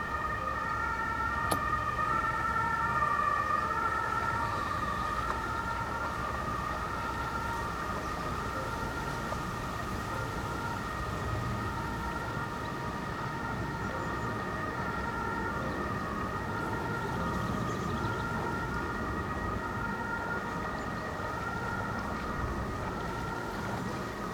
Poznan, Strzeszyn district, Strzeszynskie lake - at the pier
ambience at the pier at Strzeszynskie Lake. gentle swish of the nearby rushes. some strange clicking sounds coming from the rushes as well. ambulance on an nearby road where there is rather heavy traffic normally. racing train sounds are also common in this place as one of the main train tracks leading out of Poznan towards western north is on the other side of the lake. planes taking of as the Poznan airport is also not far away. pages of a book turned by the wind. (sony d50)